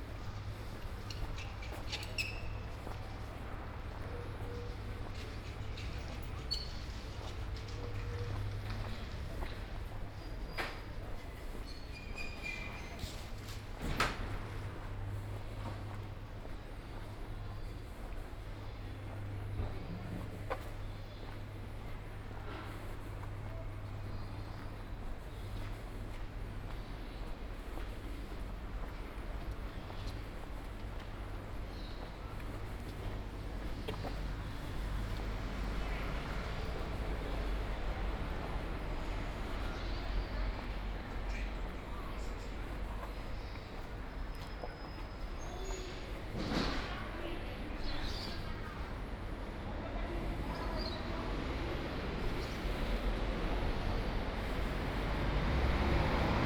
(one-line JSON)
{"title": "Ascolto il tuo cuore, città. I listen to your heart, city. Chapter XIII - Postal office and shopping in the time of COVID19 Soundwalk", "date": "2020-03-18 10:45:00", "description": "Wednesday March 18 2020. Walking to Postal Office and shopping, San Salvario district, Turin, eight day of emergency disposition due to the epidemic of COVID19.\nStart at 10:45 a.m. end at 11:20 a.m. duration of recording 35'03''\nThe entire path is associated with a synchronized GPS track recorded in the (kml, gpx, kmz) files downloadable here:", "latitude": "45.06", "longitude": "7.68", "altitude": "243", "timezone": "Europe/Rome"}